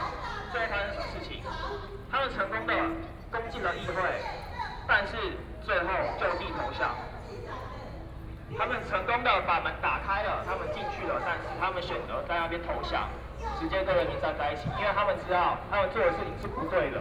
Student activism, Walking through the site in protest, People and students occupied the Legislative Yuan